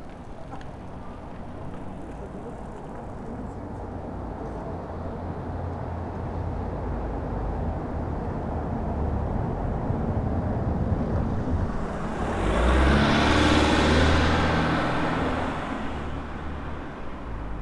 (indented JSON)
{
  "title": "Berlin: Vermessungspunkt Friedelstraße / Maybachufer - Klangvermessung Kreuzkölln ::: 28.03.2012 ::: 00:29",
  "date": "2012-03-28 00:29:00",
  "latitude": "52.49",
  "longitude": "13.43",
  "altitude": "39",
  "timezone": "Europe/Berlin"
}